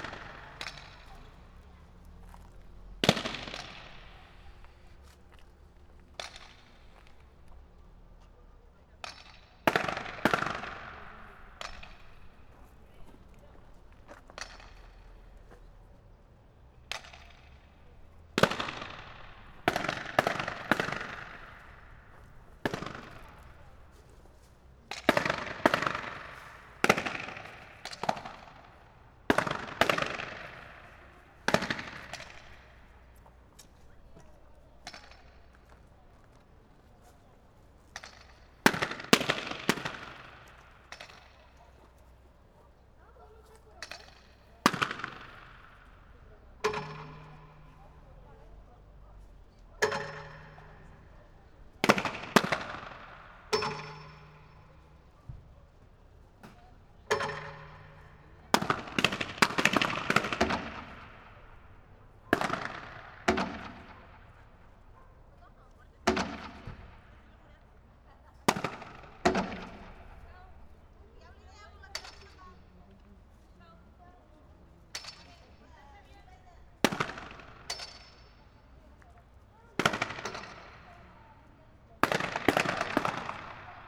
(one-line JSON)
{"title": "water tank, near Parque Cultural de Valparaíso, Chile - Memoria Transiente, performance", "date": "2015-12-02 19:15:00", "description": "abandoned water tank, Panteon, near Parque Cultural de Valparaíso. Memoria Transiente, performance by Colectivo Juan Jaula\n(Sony PCM D50)", "latitude": "-33.05", "longitude": "-71.63", "altitude": "51", "timezone": "America/Santiago"}